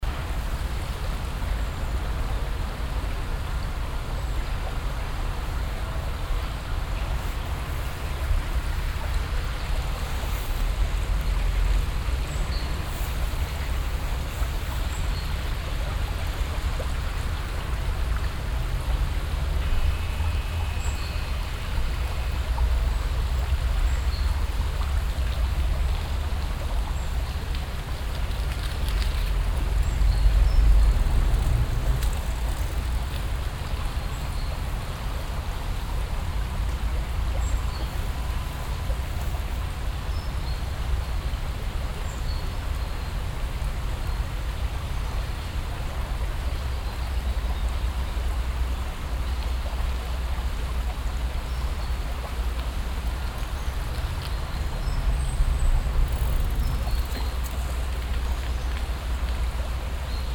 refrath, stadtpark, holzbrücke - refrath, stadtpark, holzbrücke
morgens auf holzbrücke in kleinem stadtpark, fahrradfahrer und fussgänger überquerungen
soundmap nrw - social ambiences - sound in public spaces - in & outdoor nearfield recordings